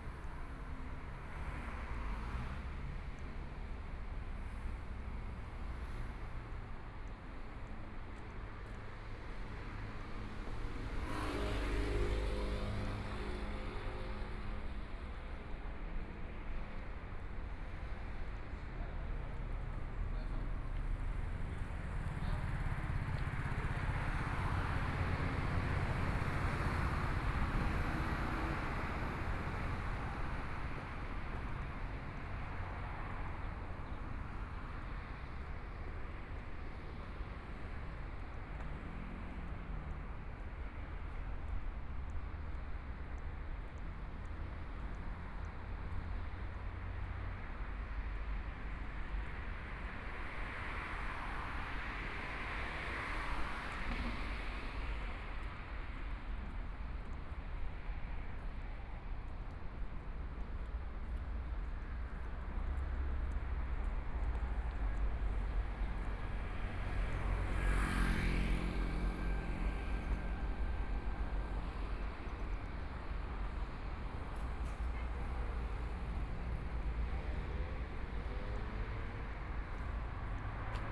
Songjiang Rd., Taipei City - on the Road
walking on the Road, Aircraft flying through, Traffic Sound
Binaural recordings, ( Proposal to turn up the volume )
Zoom H4n+ Soundman OKM II